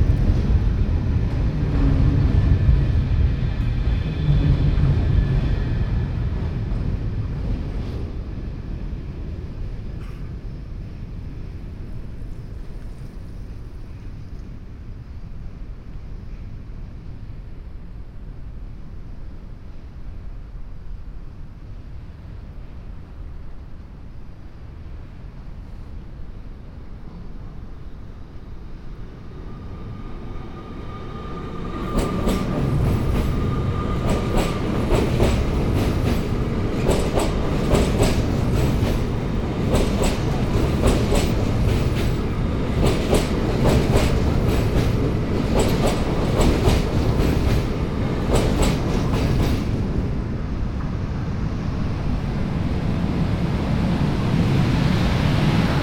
cologne, hohenzollernbrücke, lockers and trains
the sound of "fortune" lockers that are here attached by hundreds of couples at the fence that secures the railway track. trains passing the iron rhine bridge in the afternoon.
soundmap nrw - social ambiences and topographic field recordings
29 September 2009